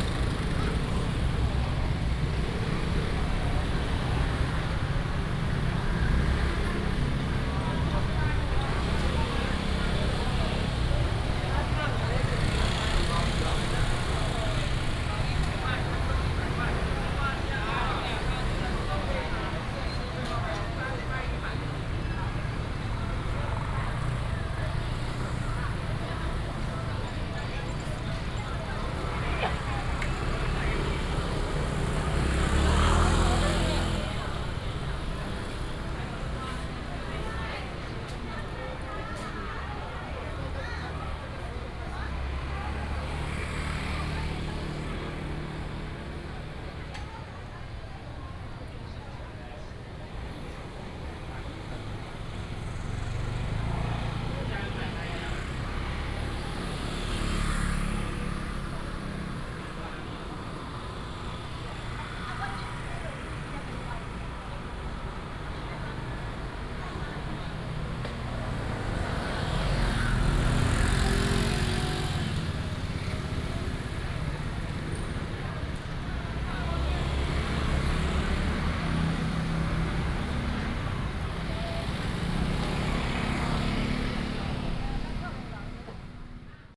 Zhongxing St., Dayuan Dist. - in the traditional market area
Walking in the traditional market area, traffic sound